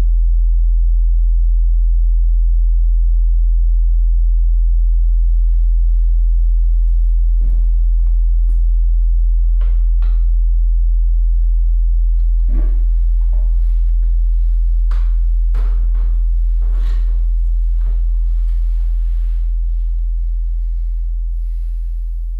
fröndenberg, niederheide, garage of family harms
inside the garage of family harms, a temporary sound and light installation by islandic artist finnbogi petursson during the biennale for international light art 2010
soundmap nrw - social ambiences and topographic field recordings